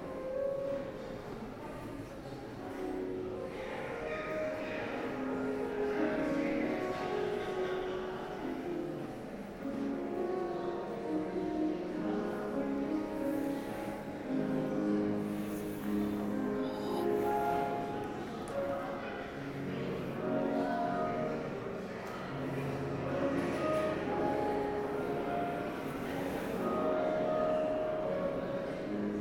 Church St, Folkestone, Regno Unito - GG Folkestone Parish Church of St Mary and St Eanswythe-190524-h19